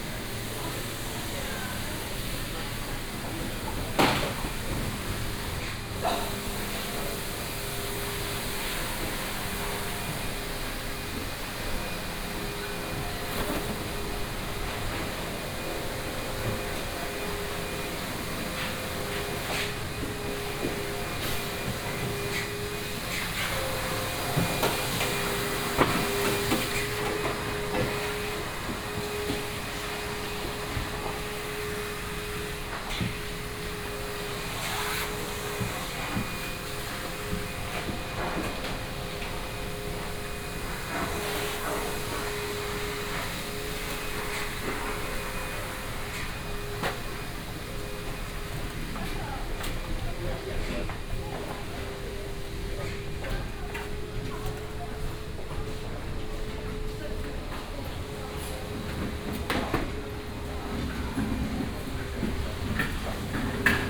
supermarket, near closing time, clean up, had to ask how the self service cash point works
(Sony D50, OKM2)
14 March 2014, 23:00